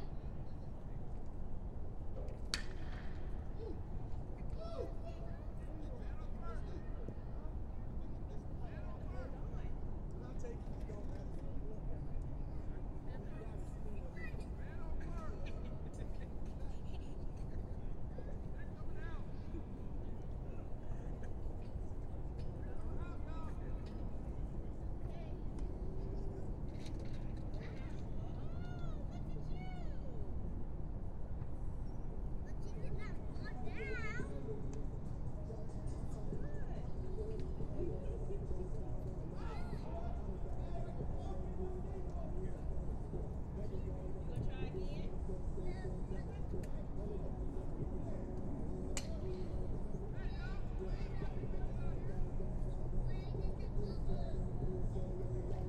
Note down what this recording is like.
A recording made around the perimeter of an athletic field. Children can be heard at a nearby playground, and sounds from a baseball game in the opposite field carry over into the recording. Two people rode through the connecting street on all-terrain quads, causing a large spike in the recording level. My microphone placement wasn't as exact as I thought it was and most of the activity is heard from the left side, but this resulted in the unintentional effect of hearing the expansive reverb/echo present in this area in the right channel. [Tascam Dr-100mkiii w/ Primo EM-272 onmi mics]